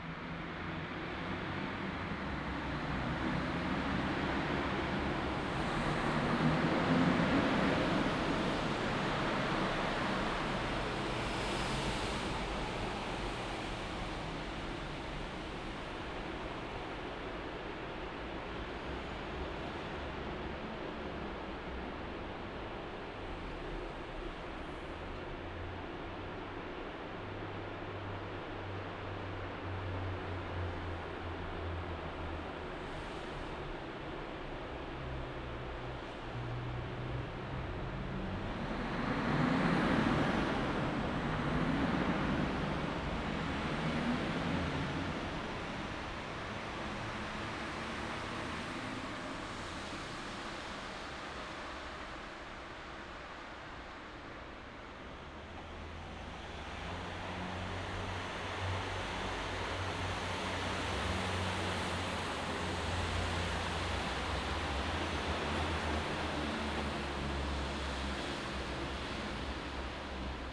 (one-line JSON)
{"title": "Favoriten, Wien, Österreich - underpass, main train station", "date": "2013-03-25 16:45:00", "description": "ambience of the underpass at the main train station - it´s used by car traffic, cyclists and pedestrians. You can also hear construction noise (the station is under construction) and the humming of the Gürtel-traffic\n- recorded with a zoom Q3", "latitude": "48.18", "longitude": "16.38", "altitude": "207", "timezone": "Europe/Vienna"}